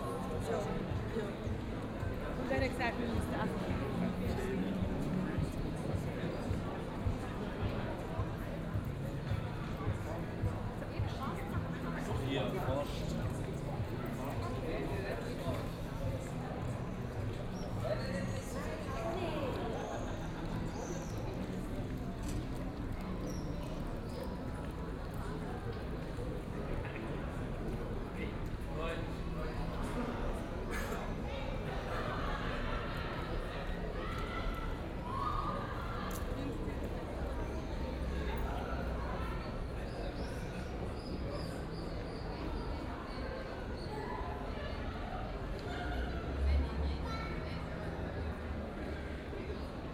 Aarau, Metzgergasse, Schweiz - Metzgergasse
Continuation of the evening stroll up the Metzgergasse, some music from a bar changes the sound of the street
Aarau, Switzerland, 28 June 2016